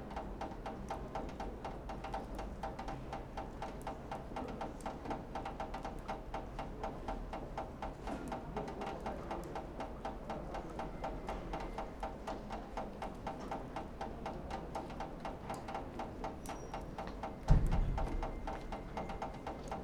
Lithuania, Vilnius, rainwater pipe
after a rain. water drips from rainwater pipe
Vilnius district municipality, Lithuania, 6 November 2012